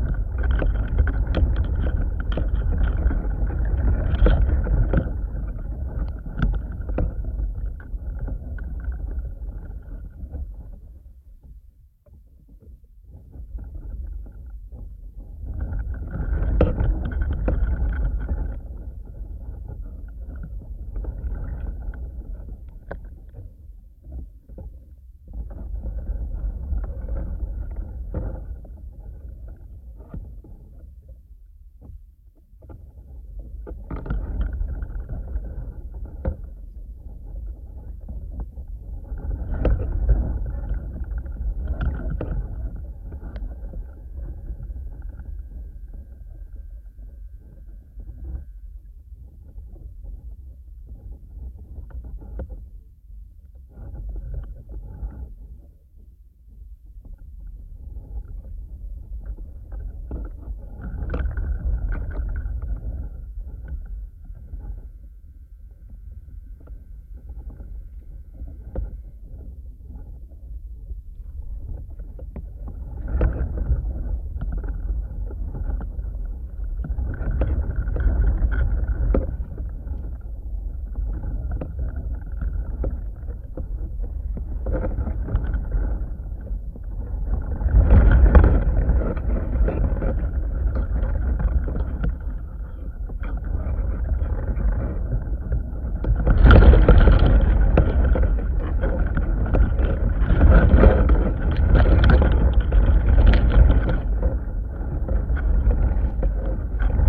Šlavantai, Lithuania - A pile of cut branches rustling
Dual contact microphone recording of a pile of cut branches, softly brushing against each other. When the wind intensifies, branches rustle louder.
Lazdijų rajono savivaldybė, Alytaus apskritis, Lietuva, 7 June 2020